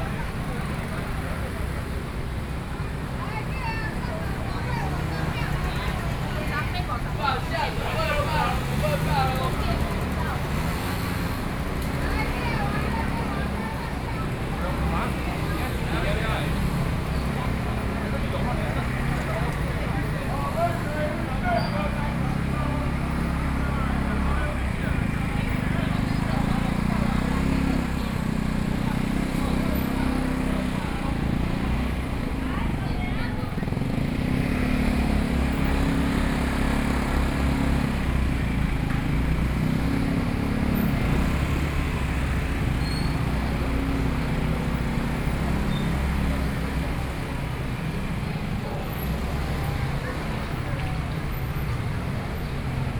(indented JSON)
{
  "title": "Zhongzheng St., 羅東鎮仁和里 - traditional market",
  "date": "2014-07-27 10:33:00",
  "description": "Traffic Sound, walking in the traditional market\nSony PCM D50+ Soundman OKM II",
  "latitude": "24.67",
  "longitude": "121.77",
  "altitude": "14",
  "timezone": "Asia/Taipei"
}